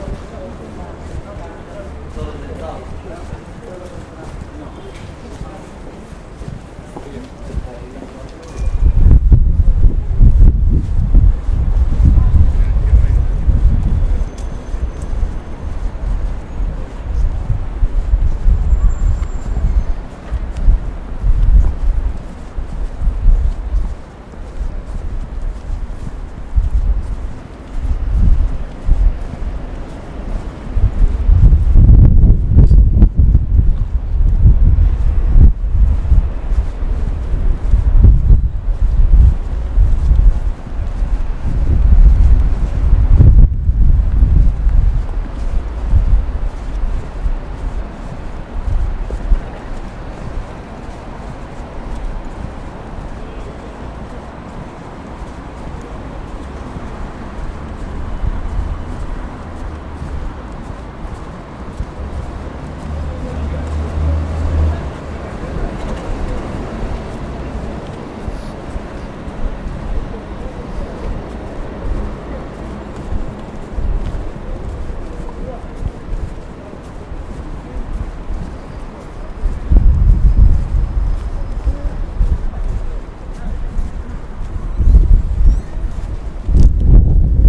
Bilbao (basque country); a walk along the river: from the town hall to Tenderia street (old town) - Bilbao (basque country); a walk along the river:
Its a warm afternoon, I get out of the bus and start walking. its crowded and traffic on the street...
many buses returning from schools with children... and a bit annoying light wind.
recorded: thursday, 22/10/2009 at 5:45 p.m
22 October 2009